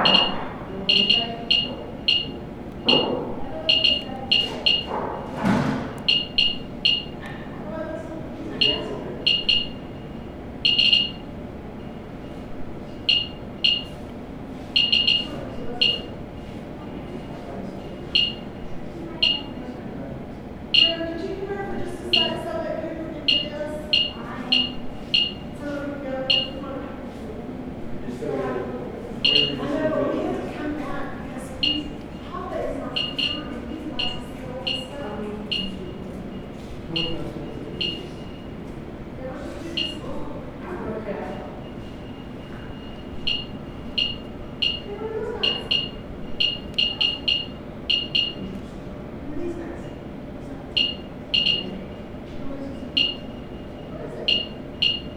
neoscenes: Geiger counter in museum